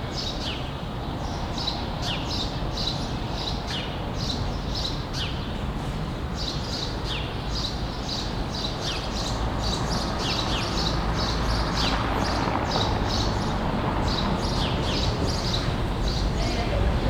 Kreuzberg, Berlin, Deutschland - Quiet life from above
A Saturday afternoon in Berlin-Kreuzberg